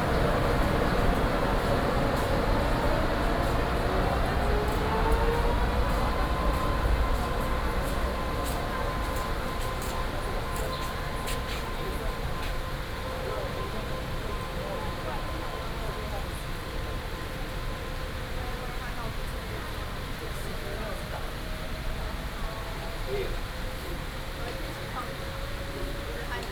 Shulin Station, New Taipei City - Railway station
Towards railway platforms, Sony PCM D50 + Soundman OKM II
新北市 (New Taipei City), 中華民國, 20 June 2012, ~12:00